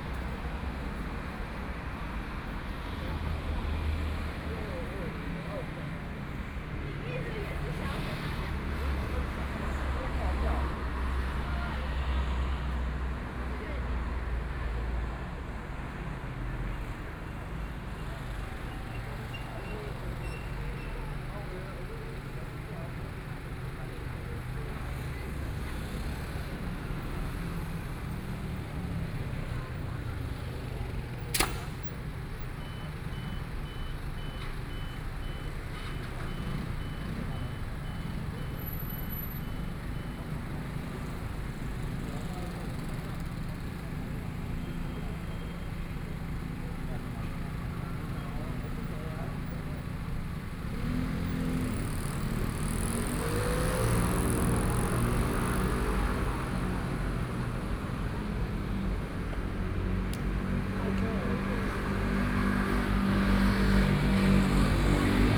In front of a convenience store, Night traffic sounds, Binaural recordings, Sony PCM D50 + Soundman OKM II